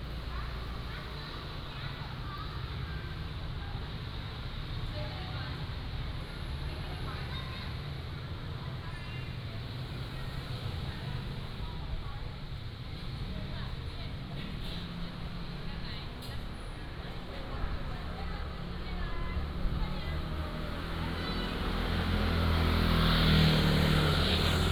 Zhongxing Rd., Jincheng Township - Walking in the Street
Walking in the Street, Traffic Sound
福建省, Mainland - Taiwan Border, 2 November 2014